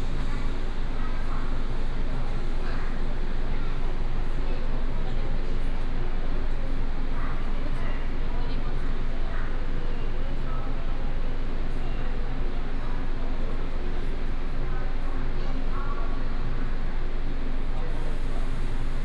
Yuanlin Station, 彰化縣 - At the station platform
At the station platform